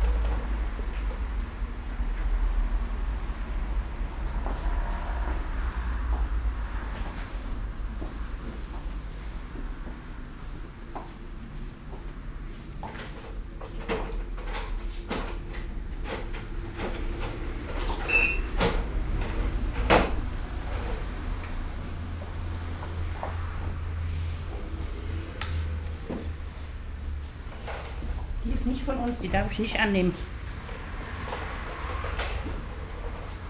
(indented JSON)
{
  "title": "refrath, dolmanstrasse, bauernmarkt",
  "description": "nachmittags in kleinem bauernamrkt an hauptverkehrsstrasse - kundengespräch\nsoundmap nrw - social ambiences - sound in public spaces - in & outdoor nearfield recordings",
  "latitude": "50.96",
  "longitude": "7.11",
  "altitude": "78",
  "timezone": "GMT+1"
}